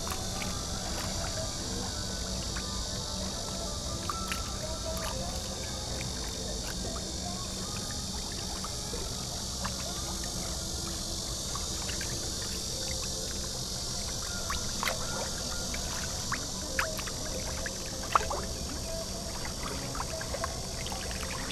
冬山河青龍岸, Yilan County - River Sound
River Sound, Traffic Sound, Opposite the tourist area of sound, Birdsong sound, Cicadas sound, Hot weather
Zoom H6 MS+ Rode NT4